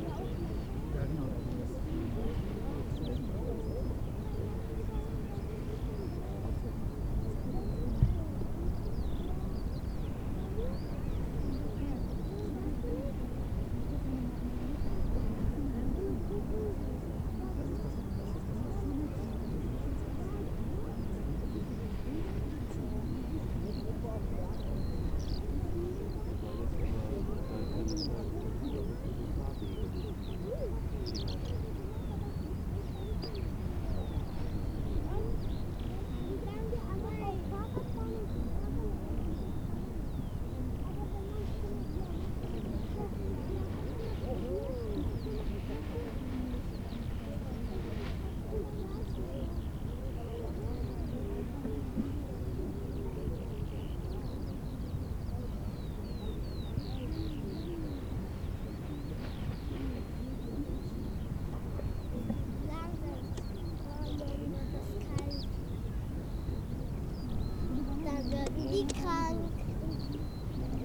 birds, vistors of the park
the city, the country & me: april 3, 2011